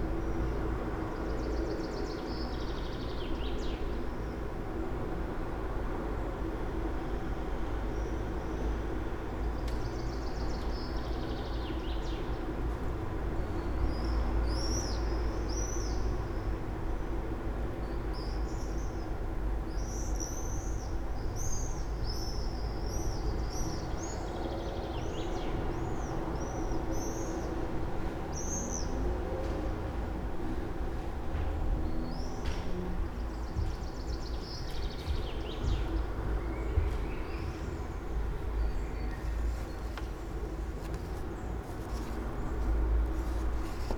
park window - saturday, siren, swifts
Maribor, Slovenia, 7 June 2014, 12:00